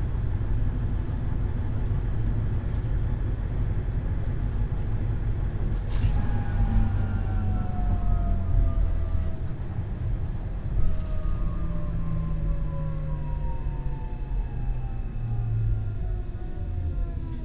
dresden, in the tram, next stop main station
driving in an old east german tram with modern announcement system
soundmap d: social ambiences/ listen to the people - in & outdoor nearfield recordings
st. petersburgerstr., in the tram